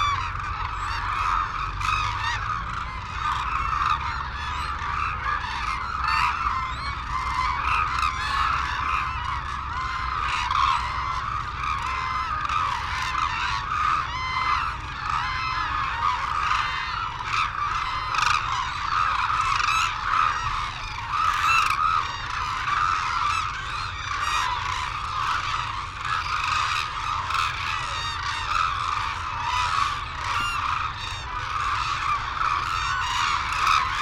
Sho, Izumi, Kagoshima Prefecture, Japan - Crane soundscape ...
Arasaki Crane Centre ... Izumi ... calls and flight calls from white naped cranes and hooded cranes ... cold windy sunny morning ... Telinga Pro DAT 5 to Sony Minidisk ... background noise ... wheezing whistles from young birds ...